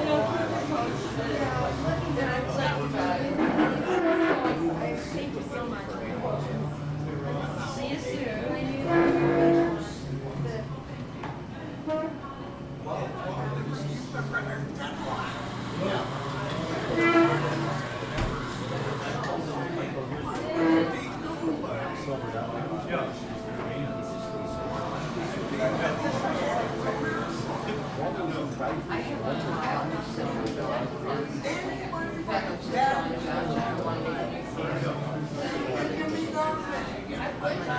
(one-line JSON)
{"title": "Transit Village, Boulder, CO, USA - Starbucks", "date": "2013-02-01 15:00:00", "latitude": "40.02", "longitude": "-105.25", "altitude": "1613", "timezone": "America/Denver"}